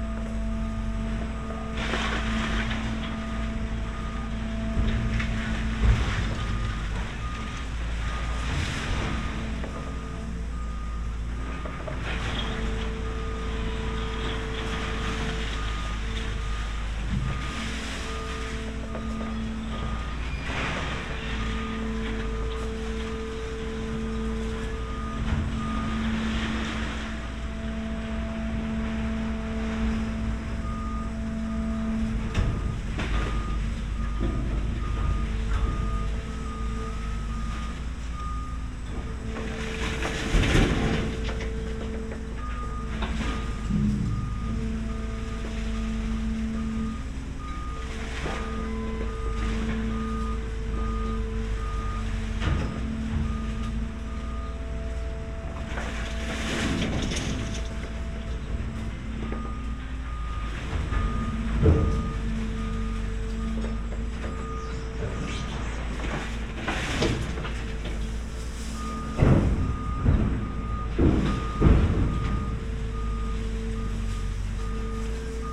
just curious how it sounds with another microphone
(SD702, AT BP4025)
Maribor, Slovenia, 28 May 2012, ~14:00